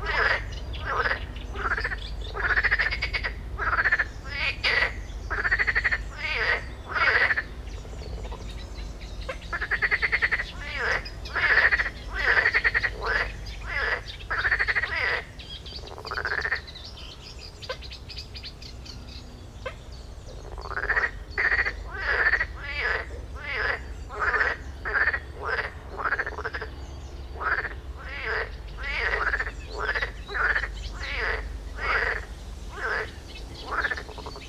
Chem. de la Roselière, Aix-les-Bains, France - Roselière dans le vent
L'image google ne correspond pas à la configuration des lieux à l'époque, rousserole effarvate, goelands, corneilles, grenouilles. le vent dans les roseaux.
France métropolitaine, France, 23 May 2003, 10:00